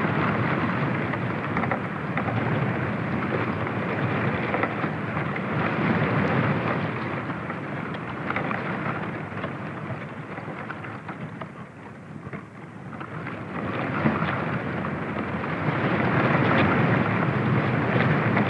Urbanização Vila de Alva, Cantanhede, Portugal - The trunk of an Olive tree on a windy day
Contact mic placed on the trunk of an Olive tree during a windy day.
Coimbra, Portugal, 19 April